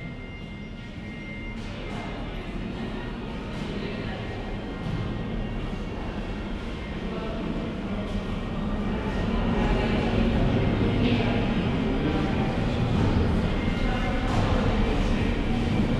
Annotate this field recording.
Recorded with a pair of DPA 4060s and a Marantz PMD661